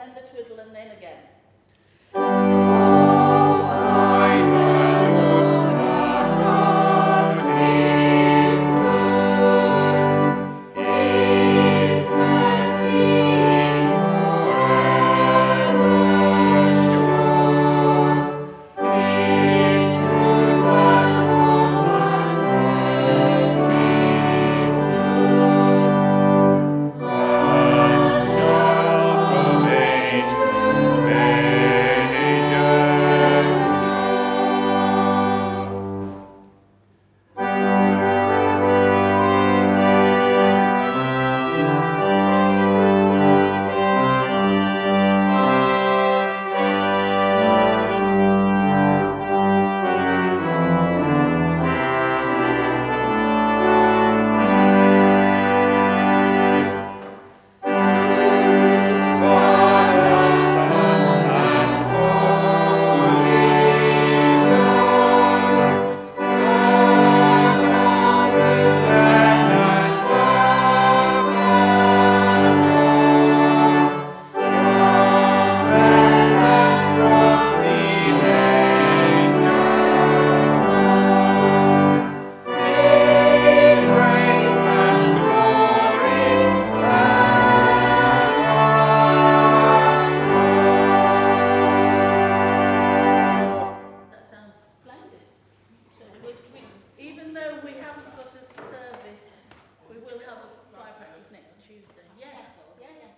The Vicarage, Vicarage Ln, Kirkby Stephen, UK - Choir Practice
Kirkby Stephen church choir rehearsal. Pearl MS-8 mic and SD MicPre 10t. Part of a set of sounds recorded and mixed by Dan Fox into a sound mosaic of the Westmorland Dales.
England, United Kingdom, 16 May 2022